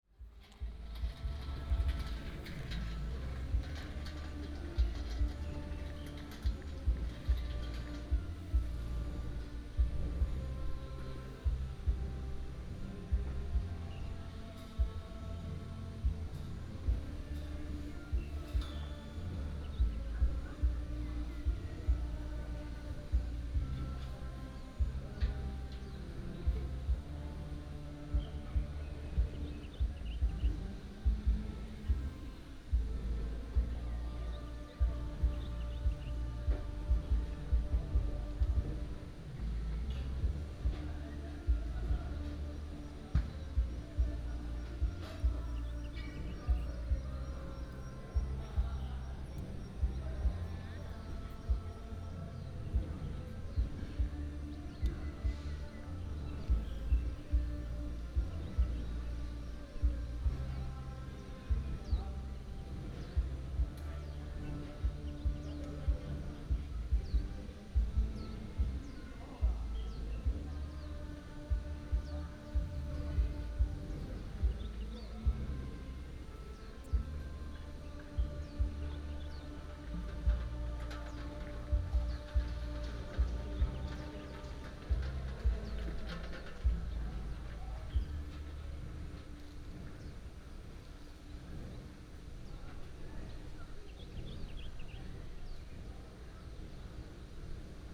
金崙林道, Taimali Township - In front of the hot spring hotel
Traffic sound, Bird cry, Karaoke, In front of the hot spring hotel